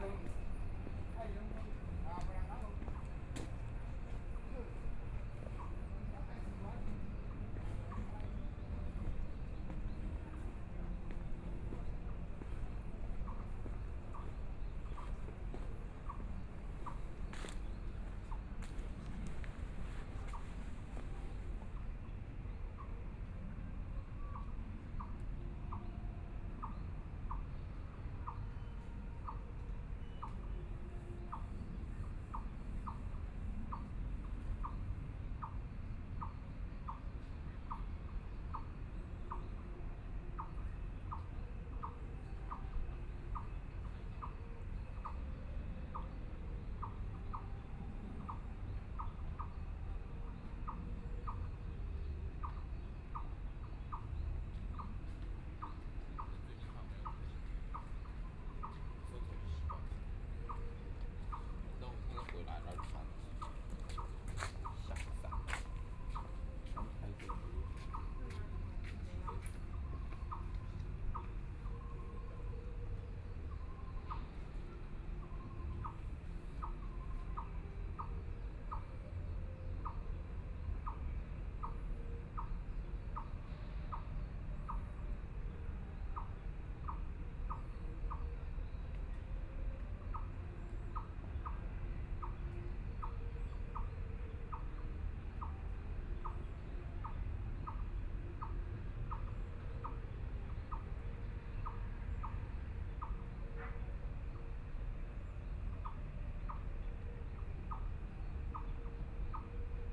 BiHu Park, Taipei City - Sitting on a park bench high
Sitting on a park bench high, Frogs sound, Aircraft flying through